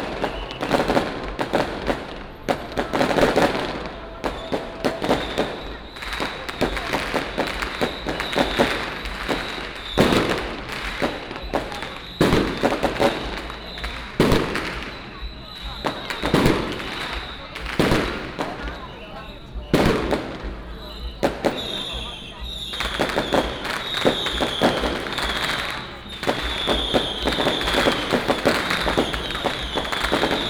Zhongzheng Rd., Baozhong Township - Firecrackers and fireworks
Firecrackers and fireworks, Many people gathered at the intersection, Traffic sound